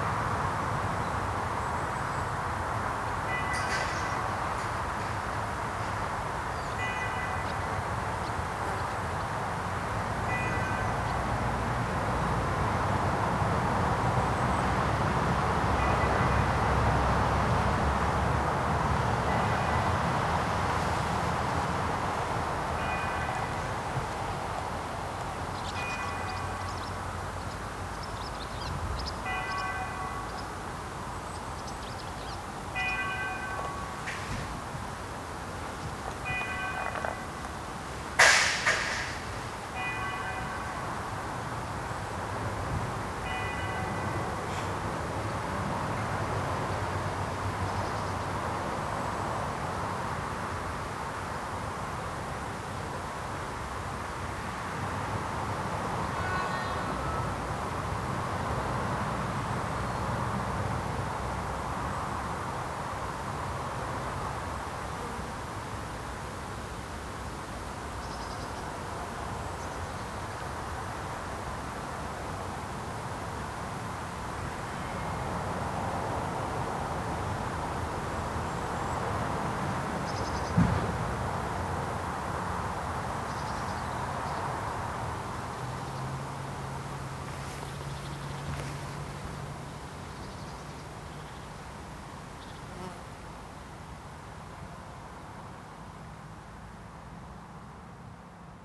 The Grange stone circle (Lios na Grainsi) is the largest stone circle in Ireland. While regarded by many as a sacred place, it can be quite noisy on a normal day of the week.